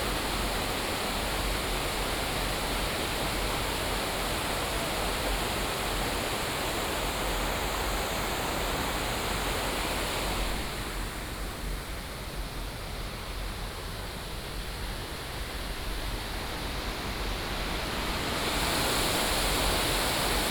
楊廷理古道, 雙溪區新北市 - Stream sound
In the mountains, Stream sound, the ancient trail
Sonu PCM D100 XY